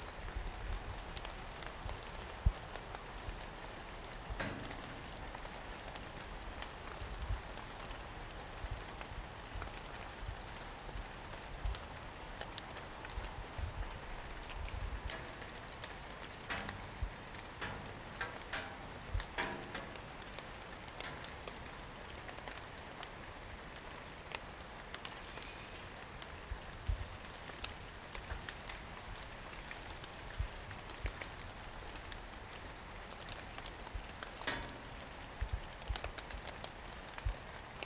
Walking Festival of Sound
13 October 2019
Abandoned playground, underneath play equipment, rain hitting metal.
13 October 2019, 15:10